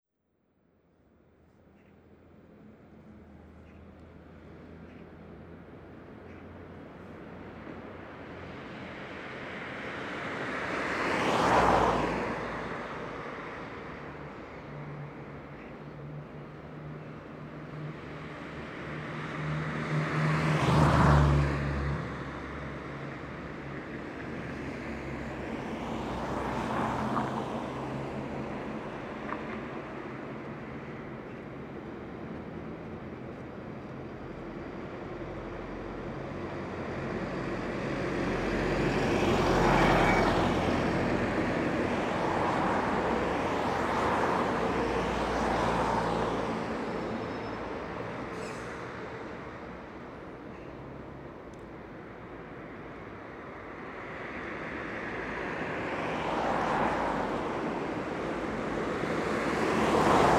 Recorder during the flypath closure week due to the ash cloud.
Recorder: Edirol R4 Pro
Microphones: Oktava MK-012 in Bluround® setup
Greater London, UK